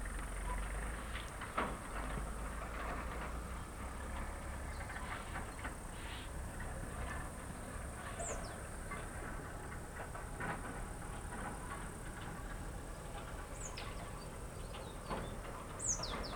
Unieszewo, Pole-Las - Distant village from forest
Vilage sounds heard from far distance at begining of forest.
Poland, 14 September